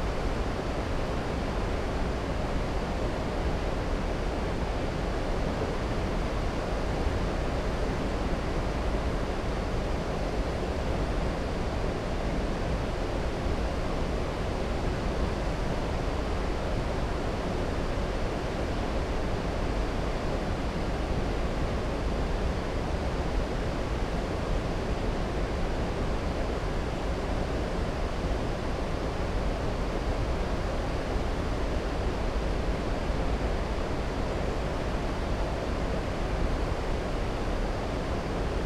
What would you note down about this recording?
A meditation by Caversham Weir (spaced pair of Sennheiser 8020s and SD MixPre 6).